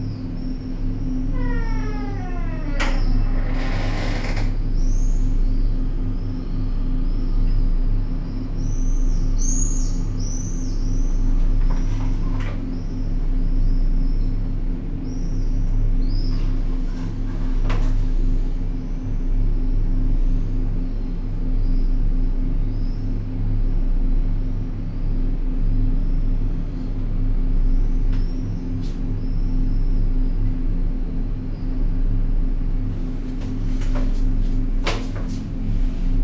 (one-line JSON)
{"title": "El Barri Gòtic, Barcelona, Spain - Airco Resonance", "date": "2000-08-13 14:05:00", "description": "Airconditioning from the Ajuntamento resounding in courtyard. Swallows.\nTelinga stereo mic without reflector", "latitude": "41.38", "longitude": "2.18", "altitude": "30", "timezone": "Europe/Madrid"}